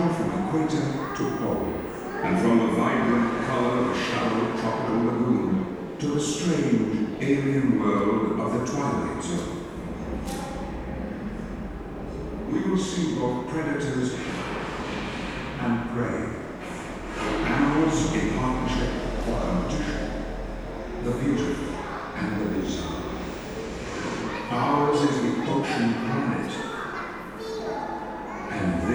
Hull, UK - The Deep ...
The Deep ... Hull ... introduction in the main hall ... open lavalier mics clipped to baseball cap ... all sort of noise ...